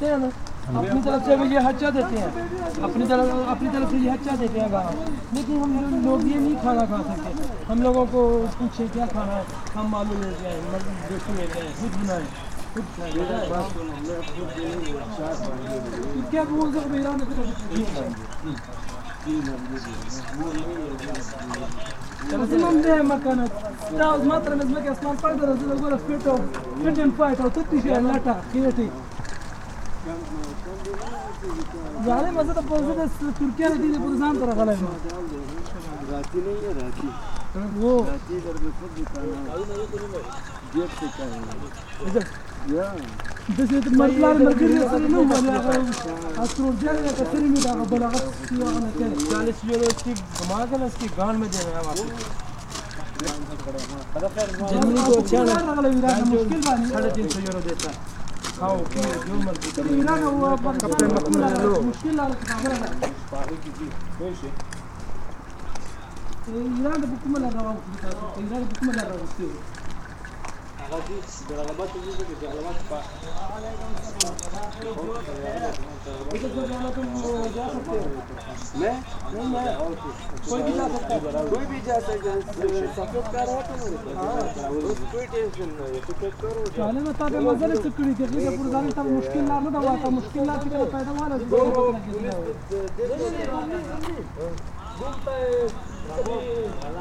Thalgau, Austria - Walking with refugees II
A group of refugees during a walk from their camp to a church community for an afternoon coffee. This is a regular activity initiated by local volunteers when the first refugees arrived to Thalgau in summer 2015. At the beginning it was mainly Syrians, most of whom meanwhile got asylum and moved to other places, mainly Vienna. The ones remaining are mostly men from Afghanistan and Iraq, who recently got joined by a group from Northern Africa. According to Austria’s current asylum policy they barely have a chance to receive asylum, nevertheless the decision procedure including several interviews often takes more than a year. If they are lucky, though, they might receive subsidiary protection. Despite their everyday being dertermined by uncertainty concerning their future, they try to keep hope alive also for their families often waiting far away to join them some day.
During the last year, the image of refugees walking at the roadside became sort of a commonplace in Austria.